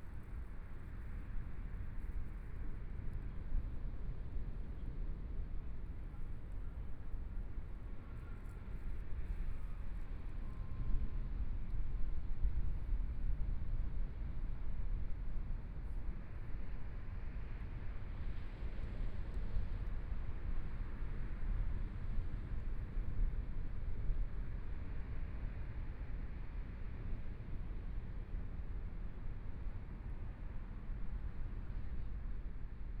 馬卡巴嗨公園, Taitung City - Evening sea
In the sea embankment, Sound of the waves, Dogs barking, Traffic Sound Binaural recordings, Zoom H4n+ Soundman OKM II
Taitung County, Taiwan, January 2014